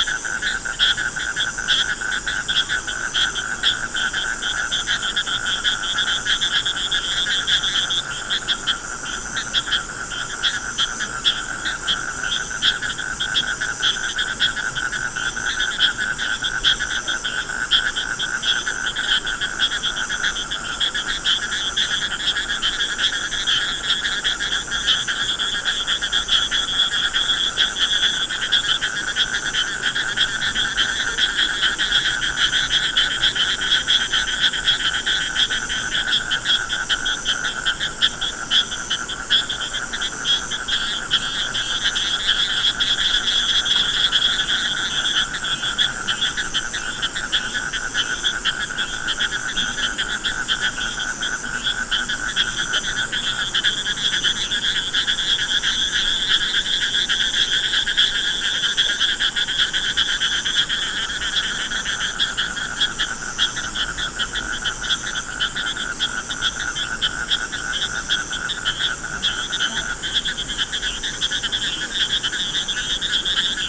Thungabadra River, Hampi
India, Karnataka, Hampi, frogs